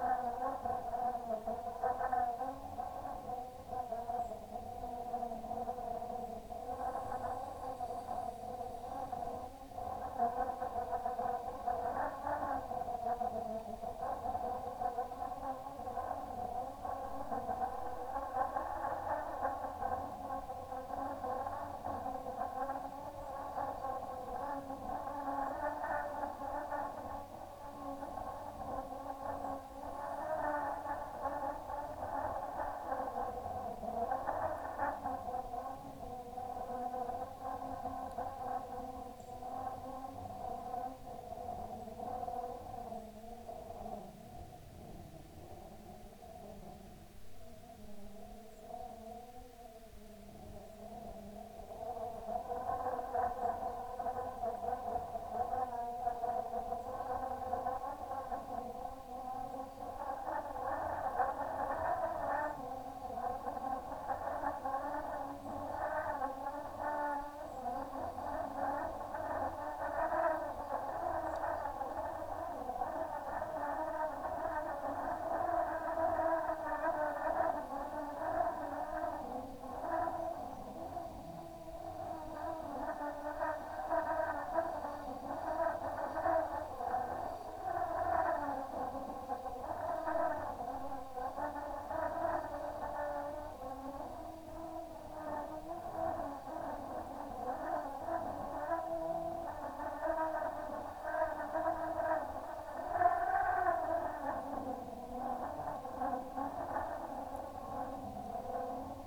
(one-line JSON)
{"title": "Danube Canal Vienna - Riverharp Recording", "date": "2012-09-28 18:16:00", "description": "Approx. 7m of 0.5mm nylon line with 500g metal weight suspended in current from pole. Schaller Oyster piezo pick up as contact mic on small wooden plate connected to string. Recorded with Zoom H1. mono.", "latitude": "48.25", "longitude": "16.37", "altitude": "162", "timezone": "Europe/Vienna"}